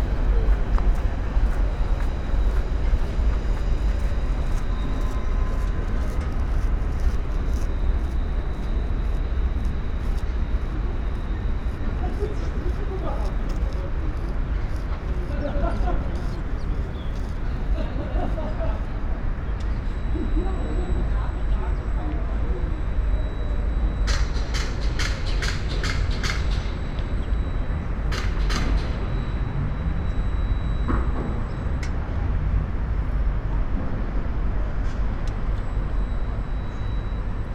Paul-Thiede Ufer, Mitte, Berlin, Germany - black waters
construction works behind me and across the river Spree at S-Bahn station Jannowitzbrücke, red brick walls twinkle as sun reflects with filigree river waves pattern, spoken words
Sonopoetic paths Berlin
3 September 2015, 15:01